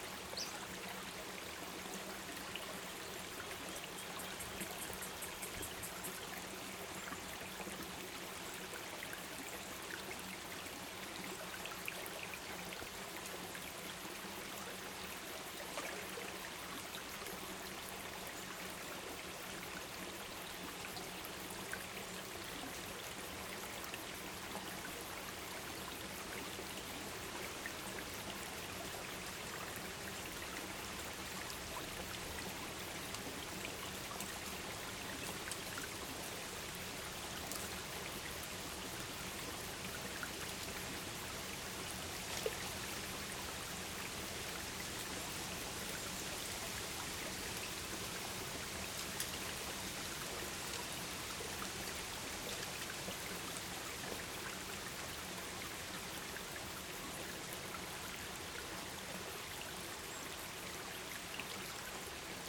Recorded with a pair of DPA 4060s into an H6 Handy Recorder
New South Wales, Australia, 19 December 2015